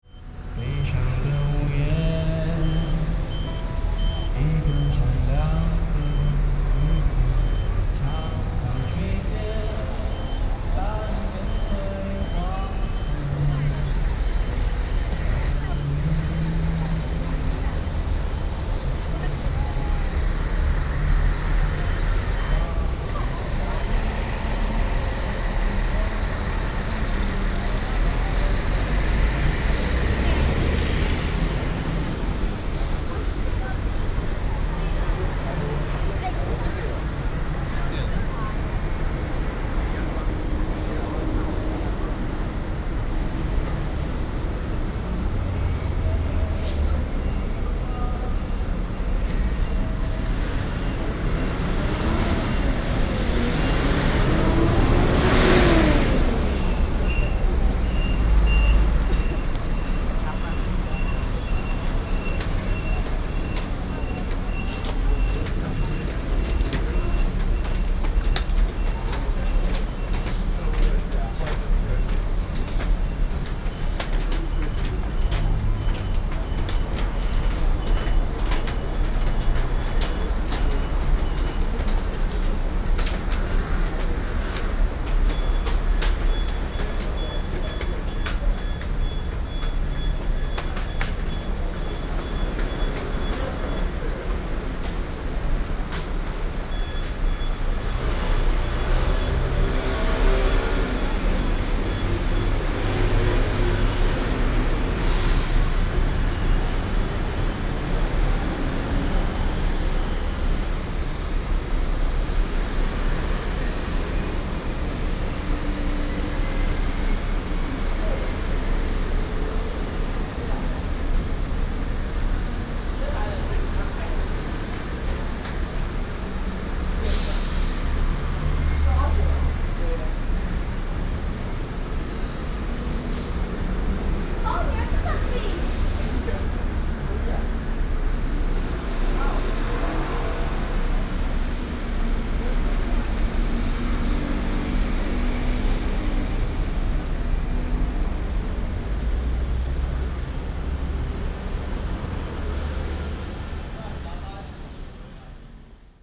Zhong 1st Rd., Ren’ai Dist., Keelung City - Walking on the road
Walking on the road, Footsteps, Walking on the bridge, Traffic Sound